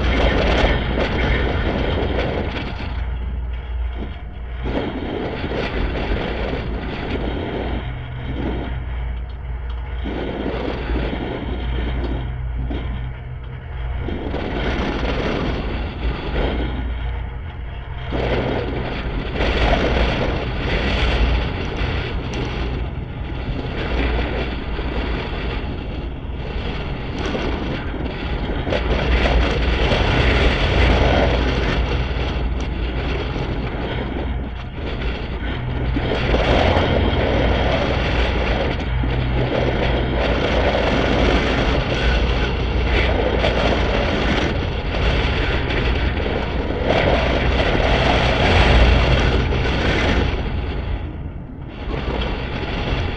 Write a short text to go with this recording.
4 contact microphone composite recording of a street sign. Strong wind is blowing against the metal plates, causing violent turbulent noises, with underlying resonant tone and noises from passing cars.